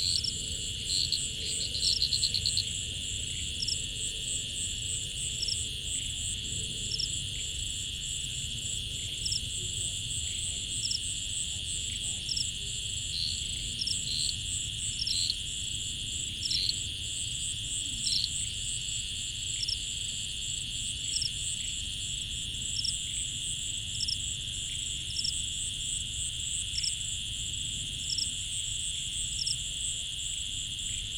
Koforidua, Ghana - Suburban Ghana Soundscapes 1
A part of field recordings for soundscape ecology research and exhibition.
Rhythms and variations of vocal intensities of species in sound.
Recording format AB with Rode M5 MP into ZOOM F4.
Date: 19.04.2022.
Time: Between 10 and 12 PM.
New Juaben South Municipal District, Eastern Region, Ghana